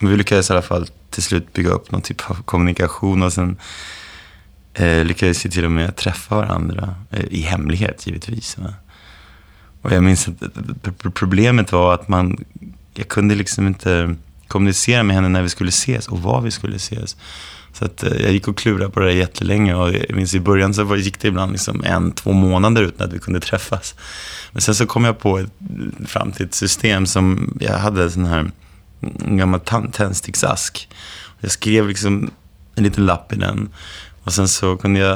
Storgatan, Tranås, Sweden - Topology of Homecoming
Topology of Homecoming
Imagine walking down a street you grew
up on. Describe every detail you see along
the way. Just simply visualise it in your mind.
At first your walks will last only a few minutes.
Then after a week or more you will remember
more details and your walks will become longer.
Five field recordings part of a new work and memory exercise by artist Stine Marie Jacobsen 2019.
Stine Marie Jacobsen visited the Swedish city Tranås in spring 2019 and spoke to adult students from the local Swedish language school about their difficulties in learning to read and write for the first time through a foreign language. Their conversations lead her to invite the students to test an exercise which connects the limited short term memory with long term memory, which can store unlimited amounts of information.
By creating a stronger path between short and long term memory, perhaps more and new knowledge will symbolically and dynamically merge with one’s childhood street and culture.